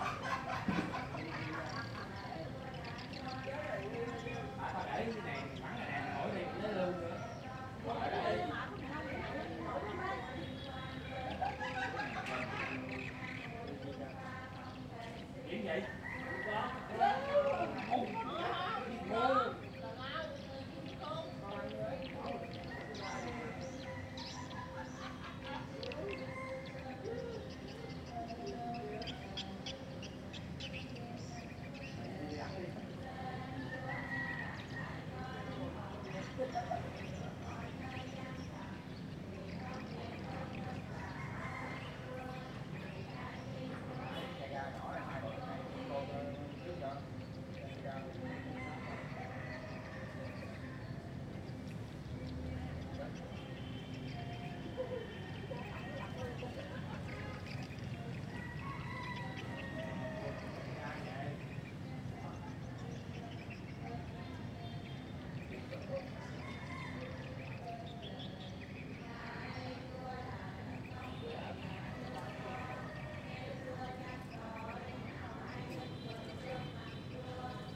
Trương Định, Châu Phú B, Châu Đốc, An Giang, Vietnam - Châu Đốc, Vietnam 01/2020
Châu Đốc soundscapes - chanting, swift farm, street sound, neighbors talking, laughing ** I was told that the old lady next door died, and these chants are part of ritual (catholic) after 100 days of mourning .... this is my personal favorite sound recording up to date, I was waiting for a moment on my recent trip to Vietnam, though it will be more nature like, but this one exceeded my expectations, please enjoy ...
Châu Đốc, Tỉnh An Giang, Việt Nam, 26 January 2020